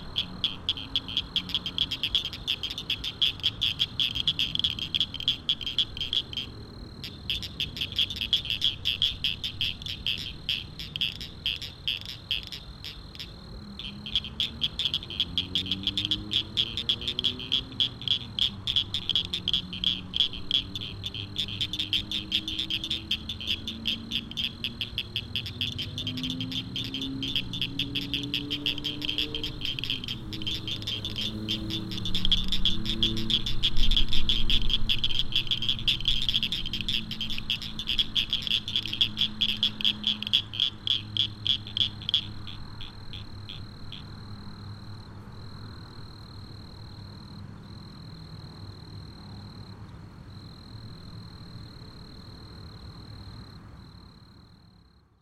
Evening frogs at Brushy Creek, Austin TX
frogs active in early spring near the creek
25 March 2010, 06:48